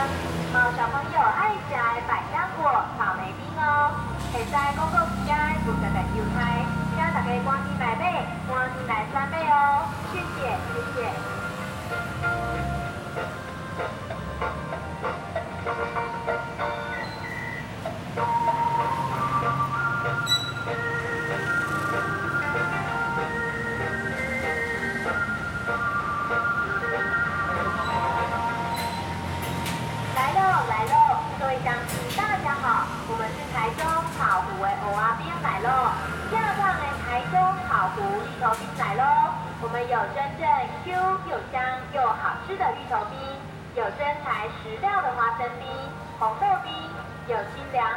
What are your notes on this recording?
The sale of ice cream, Zoom H4n